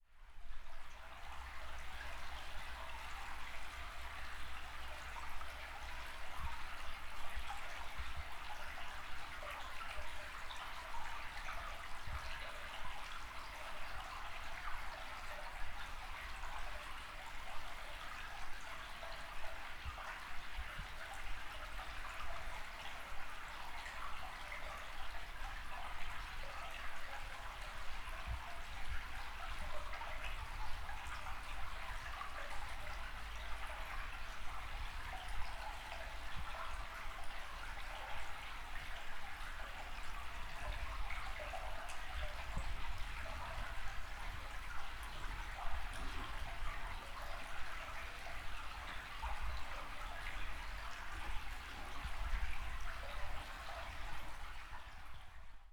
Wladyslawowo, Chlapowski alley
a creak flowing in a tunnel in Chlapowski alley